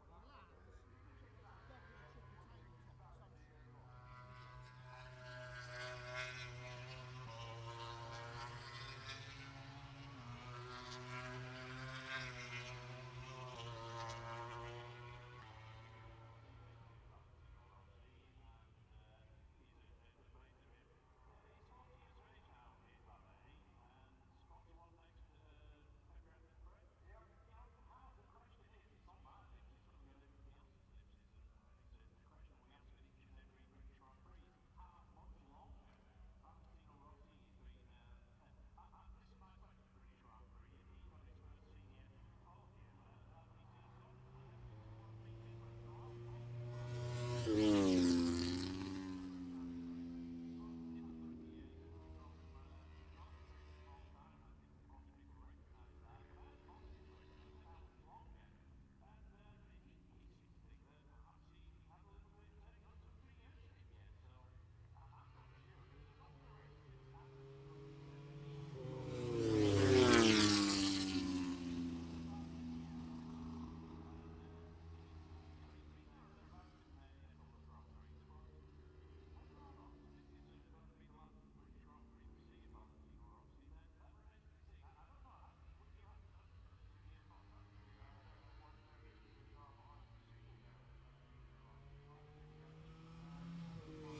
Silverstone Circuit, Towcester, UK - british motorcycle grand prix 2019 ... moto grand prix ... fp2 ...
british motorcycle grand prix 2019 ... moto grand prix ... free practice two ... maggotts ... lavalier mics clipped to bag ... background noise ...